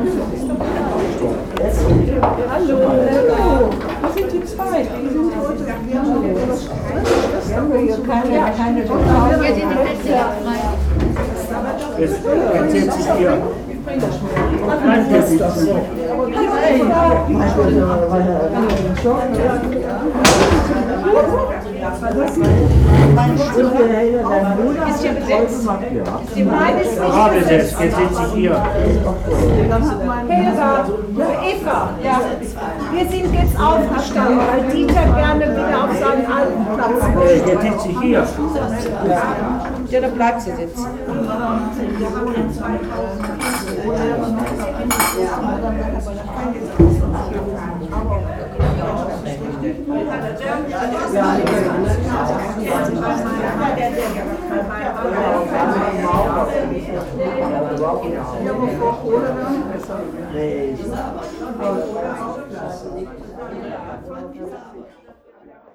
In der Blindenvereinigung Blindenhilfsverein Essen e.V. Der Klang der Stimmen und die Bewegung von Stühlen bei der Stammtischrunde.
At the friendly society for blinds. The sound of voices and the movements of chairs during the regular's table.
Projekt - Stadtklang//: Hörorte - topographic field recordings and social ambiences